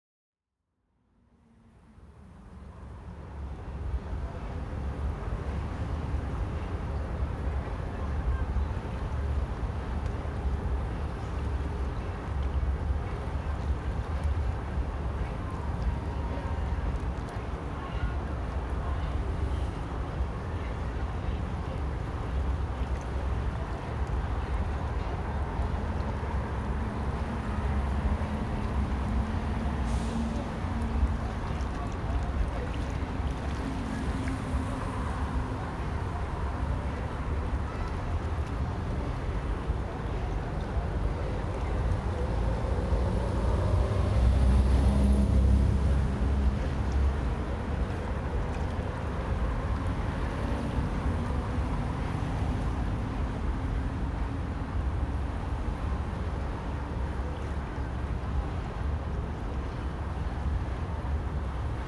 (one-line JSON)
{"title": "대한민국 서울특별시 서초구 올림픽대로 683 잠수교 - Han river, Jamsu Bridge", "date": "2019-07-26 14:18:00", "description": "Han river, Jamsu Bridge, Bus Stop, Cars passing by\n한강 잠수교, 버스정류장, 낮은 물소리, 버스", "latitude": "37.51", "longitude": "127.00", "altitude": "5", "timezone": "Asia/Seoul"}